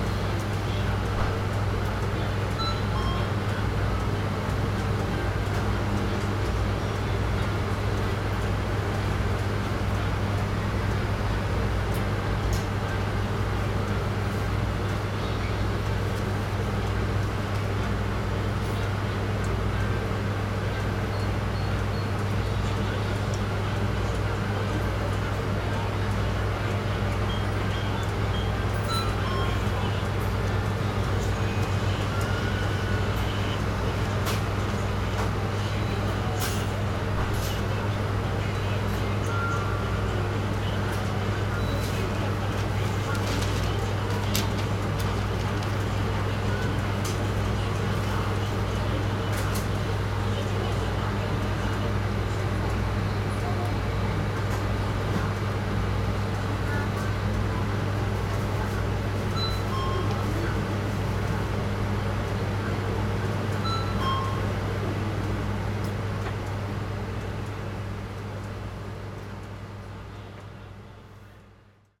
Cianjin District, Kaohsiung - In convenience stores
In convenience stores, Sony PCM D50
高雄市 (Kaohsiung City), 中華民國, 5 April 2012